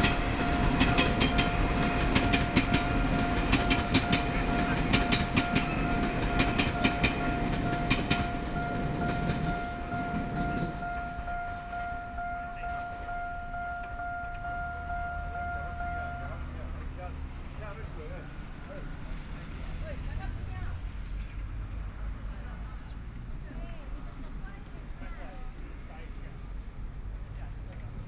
Deyang Rd., Jiaoxi Township - Trains traveling through
Traffic Sound, In the railway level crossing, Trains traveling through
Sony PCM D50+ Soundman OKM II
Yilan County, Taiwan, 26 July 2014